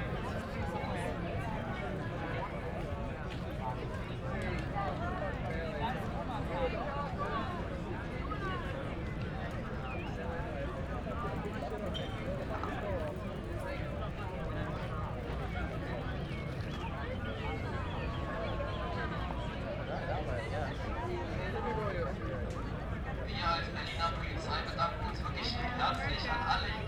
Hasenheide, Berlin, Deutschland - party crowd
because of the lack of open clubs during the pandemic, the international party crowd has shifted to parks. The pressure on the green patches within the city during this spring have been immense, parks are wasted and polluted, and drying out because of missing rain.u
(SD702, Sennheiser MKH8020)
21 June 2020, 21:55